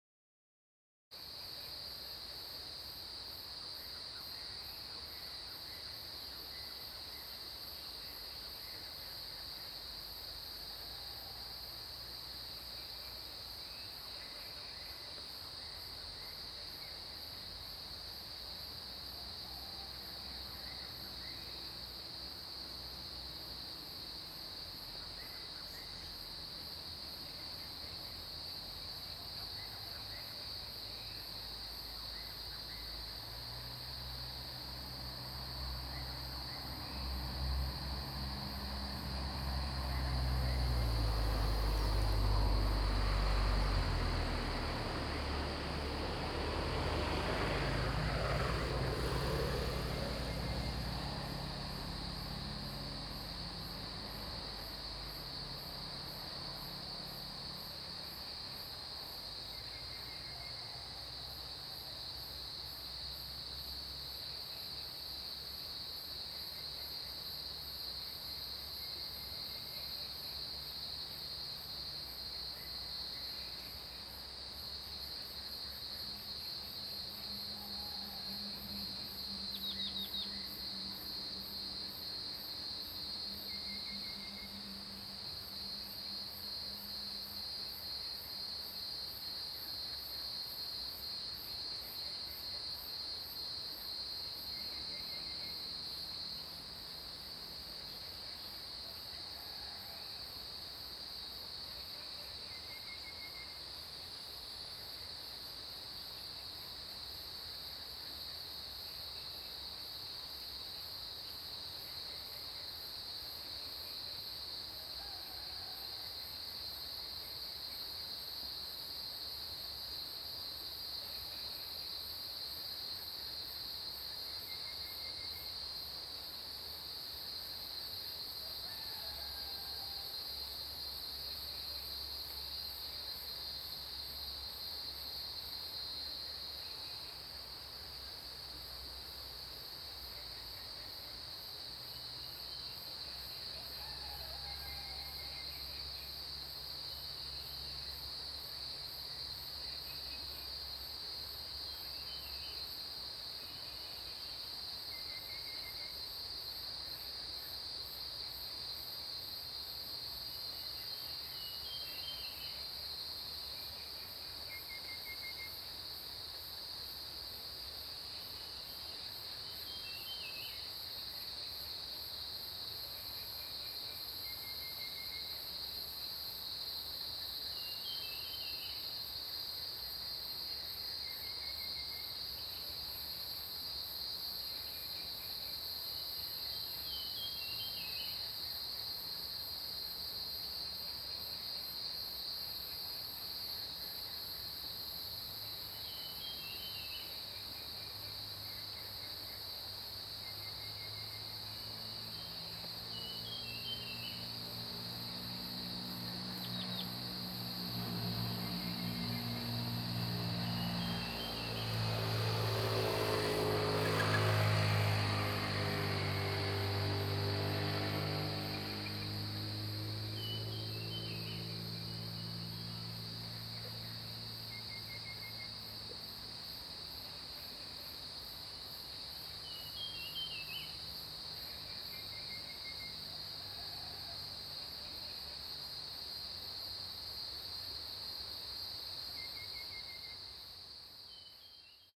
種瓜路, 埔里鎮桃米里, Taiwan - Early morning
Cicada sounds, Bird calls, Early morning
Zoom H2n MS+XY
Puli Township, Nantou County, Taiwan